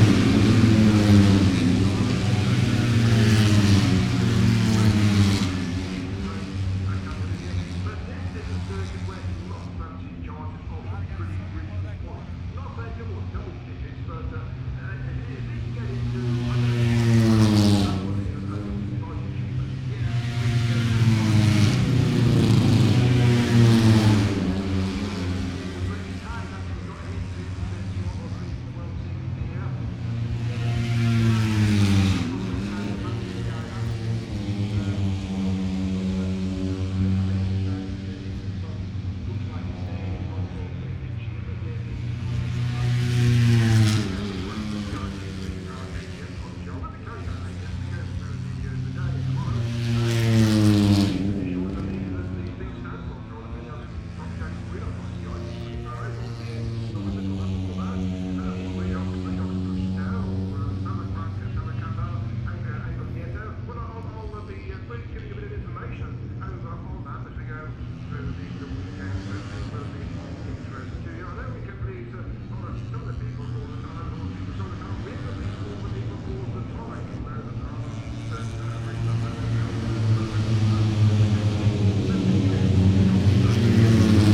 Silverstone Circuit, Towcester, UK - british motorcycle grand prix 2019 ... moto three ... fp1 ...
british motorcycle grand prix 2019 ... moto three ... free practice one ... inside maggotts ... some commentary ... lavalier mics clipped to bag ... background noise ... the disco in the entertainment zone ..?
England, UK, 23 August 2019